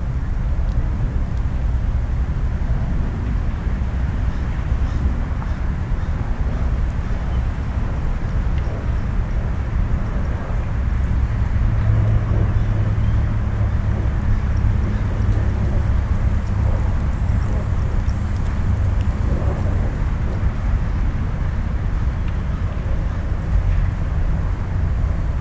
equipment used: M-Audio Microtrack
hill along pond
Montreal, QC, Canada, 28 August 2008